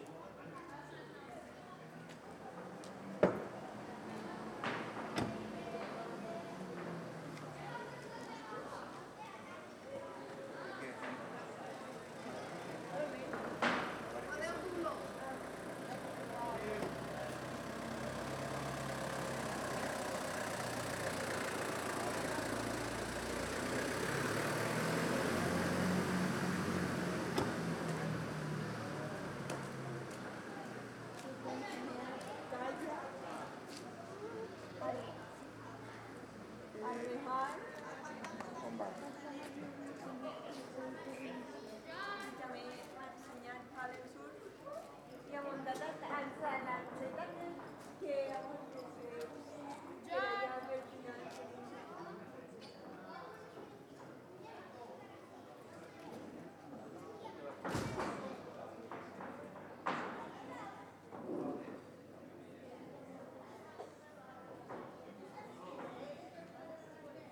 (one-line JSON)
{"title": "Carrer Sant Rafael, Tàrbena, Alicante, Espagne - Tàrbena - Espagne - Ambiance du soir sur la place du village.", "date": "2022-07-22 21:00:00", "description": "Tàrbena - Province d'allocante - Espagne\nAmbiance du soir sur la place du village.\nZOOM F3 + AKG C451B", "latitude": "38.69", "longitude": "-0.10", "altitude": "561", "timezone": "Europe/Madrid"}